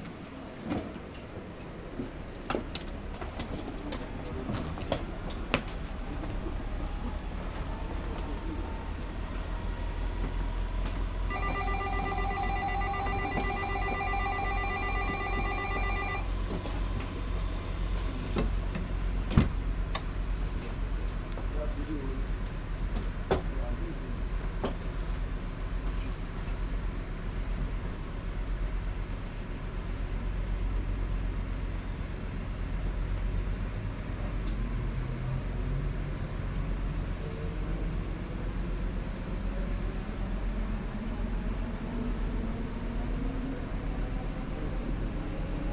Houilles, France - Train in Houilles station
The train in the Houilles station, going to Paris Saint-Lazare.